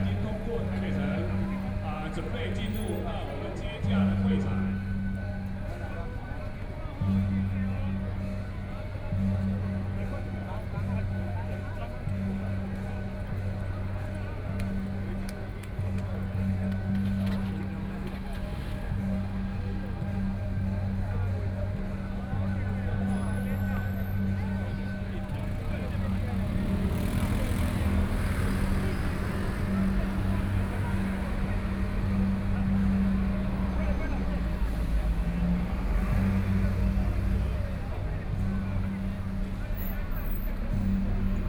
Yanping S. Rd., Taipei City - Traditional Festivals
Traditional Festivals, Mazu (goddess), Binaural recordings, Zoom H6+ Soundman OKM II